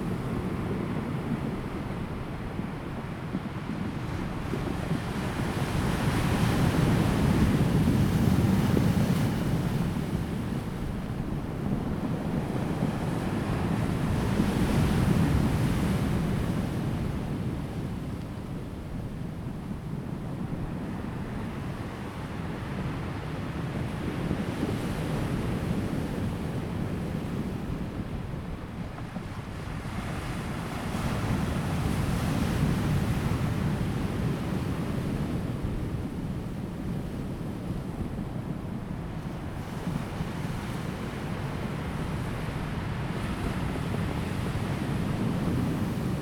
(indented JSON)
{
  "title": "南田海岸親水公園, 達仁鄉, Taiwan - the waves",
  "date": "2018-03-23 10:13:00",
  "description": "Sound of the waves, Rolling stones, wind\nZoom H2n MS+XY",
  "latitude": "22.28",
  "longitude": "120.89",
  "altitude": "2",
  "timezone": "Asia/Taipei"
}